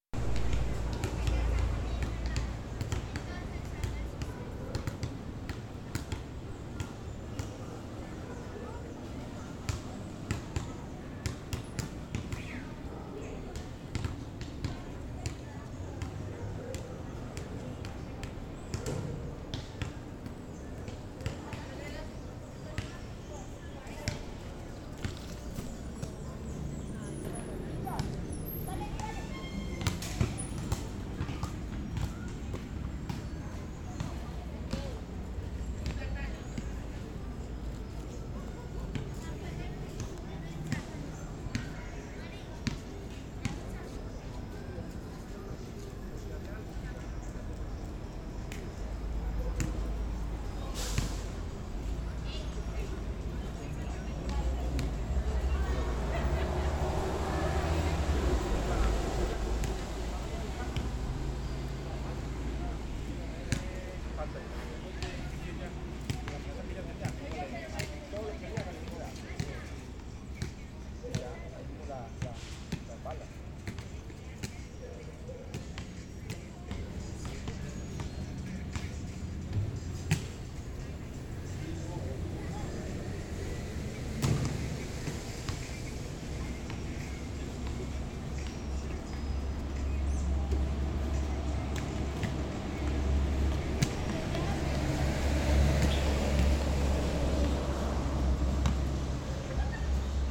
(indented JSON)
{
  "title": "Cl., Medellín, Antioquia, Colombia - Cancha Belén Los Alpes",
  "date": "2021-11-09 16:47:00",
  "description": "Cancha de Baloncesto día nublado.\nSonido tónico: Personas hablando, balones rebotando.\nSeñal sonora: Buses pasando.\nSe grabó con el micrófono de un celular.\nTatiana Flórez Ríos- Tatiana Martinez Ospino - Vanessa Zapata Zapata",
  "latitude": "6.23",
  "longitude": "-75.61",
  "altitude": "1561",
  "timezone": "America/Bogota"
}